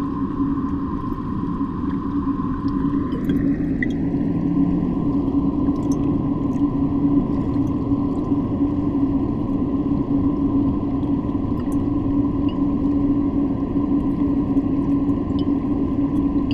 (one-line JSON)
{"title": "at the edge, mariborski otok, river drava - glass bowl", "date": "2014-05-09 19:03:00", "latitude": "46.57", "longitude": "15.61", "altitude": "260", "timezone": "Europe/Ljubljana"}